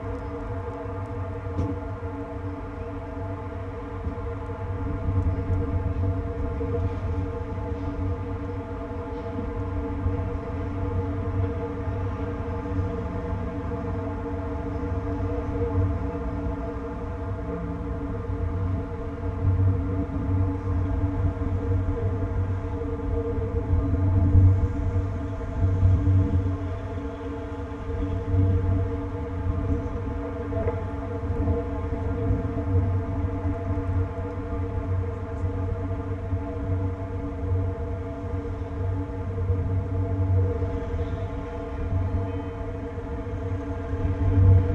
Maribor, Slovenia - one square meter: handrail support poles, second pair
a series of poles along the riverside that once supported handrails for a now-overgrown staircase down to the waters edge. the handrails are now gone, leaving the poles open to resonate with the surrounding noise. all recordings on this spot were made within a few square meters' radius.